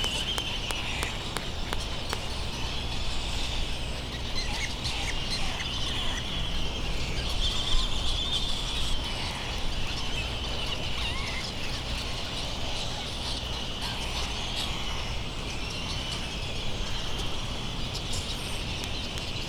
United States - Midway Atoll soundscape ...
Soundscape ... Sand Island ... Midway Atoll ... bird calls from laysan albatross ... bonin petrels ... white terns ... black noddy ... wind thru iron wood trees ... darkness has fallen and bonin petrels arrive in their thousands ... open lavalier mics on mini tripod ...